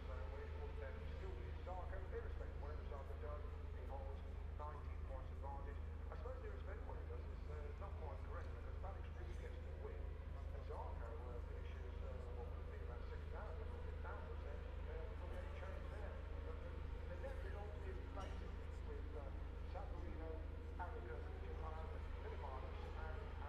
Lillingstone Dayrell with Luffield Abbey, UK - British Motorcycle Grand Prix 2016 ... moto two ...
moto two ... free practice one ... International Pit Straight ... Silverstone ... open lavalier mics on T bar ...